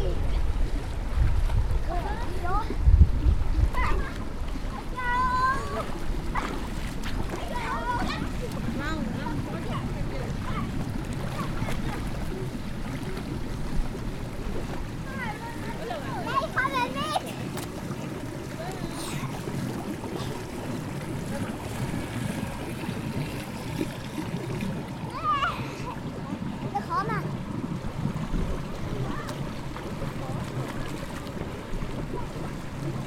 Kinderbad Marzili Bern
Kinderbad im Marzili, Brustschwumm gemischt mit Hundeschwumm von einem kleinen Mädchen, die Mutter schaut kritisch zu
Bern, Schweiz, 10 June